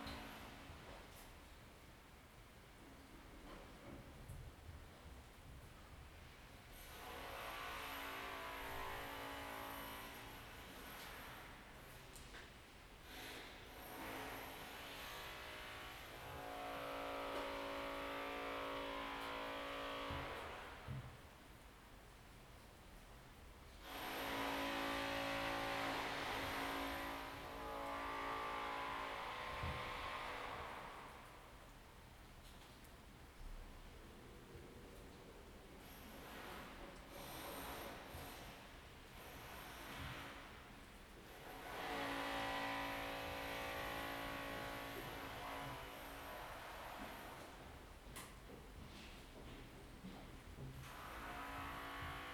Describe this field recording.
"Inside at Noon with open windows in the time of COVID19" Soundscape, Chapter LXXV of Ascolto il tuo cuore, città. I listen to your heart, city, Wednesday May 1”th 2020. Fixed position in the very centre of my apartment at San Salvario district with all windows open, Turin, sixty four days after (but day ten of Phase II) emergency disposition due to the epidemic of COVID19. Start at 11:42 a.m. end at 00:10 p.m. duration of recording 27’45”